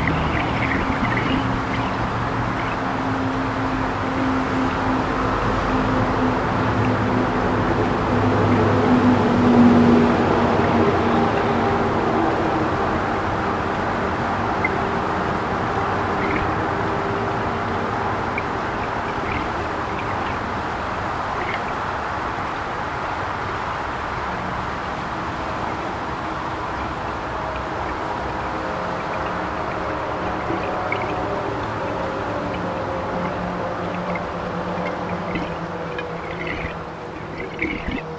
St Paul Crescent, St. Catharines, ON, Canada - The Twelve | St. Paul Crescent Truss Bridge
This recording mixes audio recorded on and in the water below the old truss bridge on St. Paul Crescent (long closed to vehicular traffic), just south of the higher Burgoyne Bridge. Beneath it flows the Twelve Mile Creek, just north of the confluence of Dick’s Creek and the Twelve. Dick’s Creek is named for Richard Pierpoint, a significant person in Ontario Black history and Twelve Mile is named for the distance of its mouth from the Niagara River. A section of Dick’s was buried with the construction of the Highway 406 Extension, which opened in 1984, and surfaces barely east of the confluence. The highway runs perpendicular and just east to the truss bridge and is the source of the traffic sound. I lowered a hydrophone from the bridge into the Twelve. The current here is very strong and controlled by Ontario Power Generation further upstream on the Twelve.
Ontario, Canada, 21 July 2020